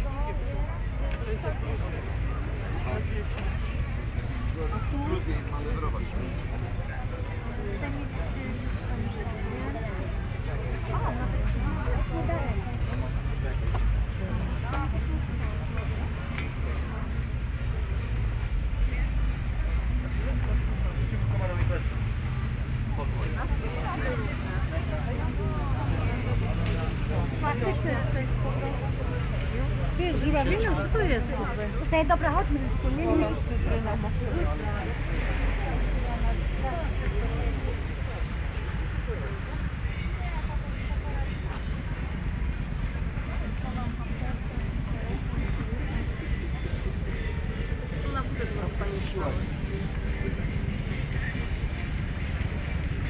Fair at Park Nitribitta, Krynica-Zdrój, Polska - (653 BI) walk around fair
Binaural walk around fair at Park Nitribitta at Sunday around noon.
Recorded with DPA 4560 on Sound Devices MixPre6 II.
powiat nowosądecki, województwo małopolskie, Polska, 26 July 2020